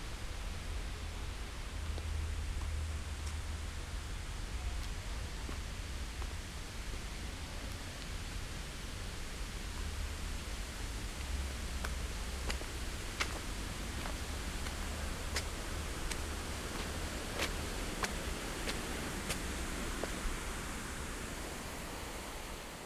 {"title": "Viktoriapark, Kreuzberg, Berlin - artificial waterfall", "date": "2013-08-24 11:20:00", "description": "Victoriapark, Berlin Kreuzberg. In summer an artificial waterfall originates at the foot of the monument and continues down the hillside to the intersection of Großbeerenstraße and Kreuzbergstraße.\n(Sony PCM D50, DPA4060)", "latitude": "52.49", "longitude": "13.38", "altitude": "60", "timezone": "Europe/Berlin"}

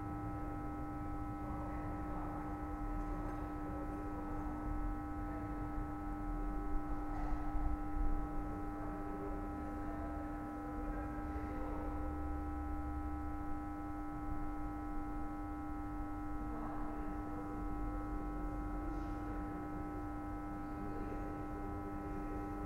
{"title": "kasinsky: a day in my life", "date": "2010-05-27 01:14:00", "description": "...at the end of the day. Is my birthday...buzz of a streetlight...some passerby...", "latitude": "42.86", "longitude": "13.58", "altitude": "155", "timezone": "Europe/Rome"}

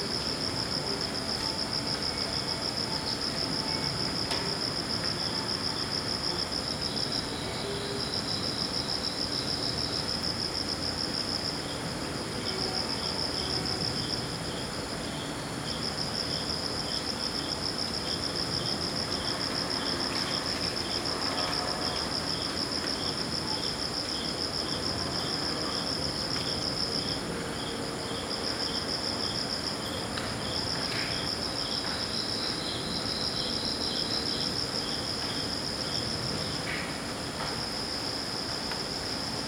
{"title": "TNUA, 29 July.7pm", "description": "record at, 29 July, 2008.7pm.\nTaipei National University of the Arts", "latitude": "25.13", "longitude": "121.47", "altitude": "71", "timezone": "GMT+1"}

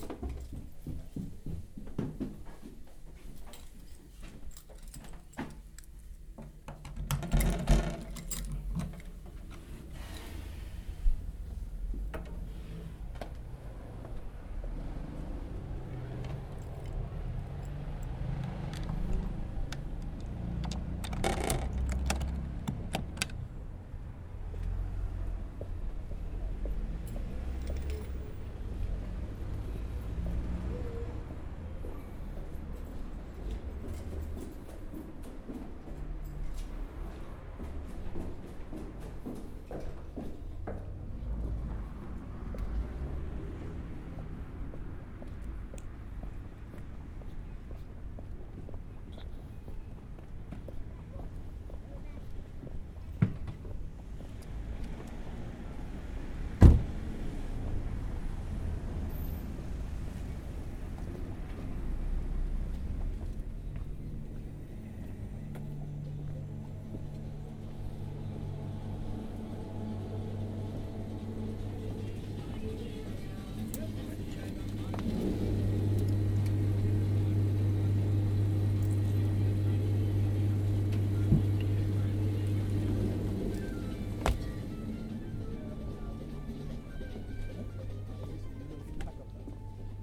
{"title": "London Borough of Lambeth, Greater London, UK - Get a beer", "date": "2012-12-14 21:26:00", "description": "I went to get a beer from the off licence in front of my flat. Decided to record it. H4n Recorder.", "latitude": "51.45", "longitude": "-0.12", "altitude": "45", "timezone": "Europe/London"}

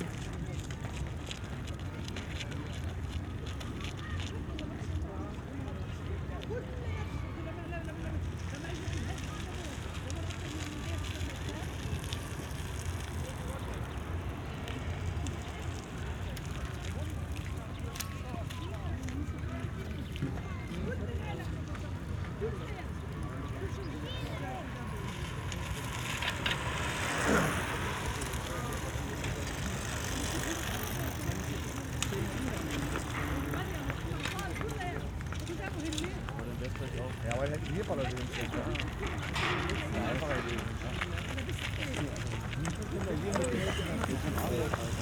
{"title": "Tempelhofer Feld, Berlin, Deutschland - entrance area south east", "date": "2012-08-21 19:20:00", "description": "summer evening ambience at the south east entrance to the Tempelhof field. lots of activity, bikers, runners, pedestrians, a little girl is crying like hell because her family left her behind.\n(SD702, AT BP4025)", "latitude": "52.47", "longitude": "13.42", "altitude": "49", "timezone": "Europe/Berlin"}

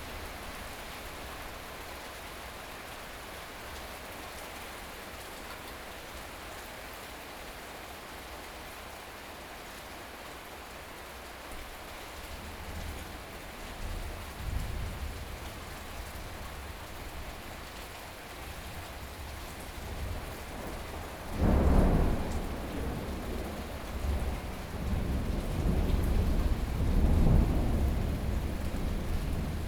{"title": "Beitou - Thunderstorm", "date": "2013-07-07 15:13:00", "description": "Thunderstorm, Zoom H4n+ Soundman OKM II +Rode NT4, Binaural recordings", "latitude": "25.14", "longitude": "121.49", "altitude": "23", "timezone": "Asia/Taipei"}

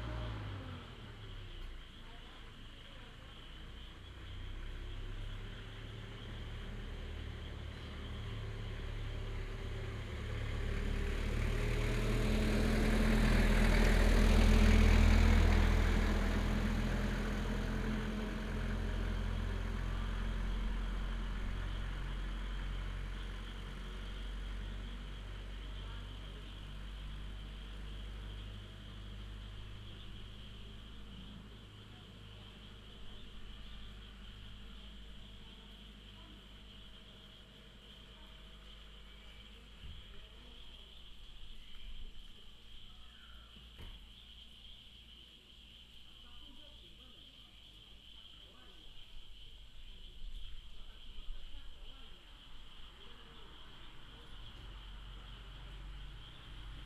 {"title": "牡丹路222號, Mudan Township - In aboriginal tribal streets", "date": "2018-04-02 18:47:00", "description": "Traffic sound, In aboriginal tribal streets, Insect cry, Frog croak", "latitude": "22.17", "longitude": "120.83", "altitude": "278", "timezone": "Asia/Taipei"}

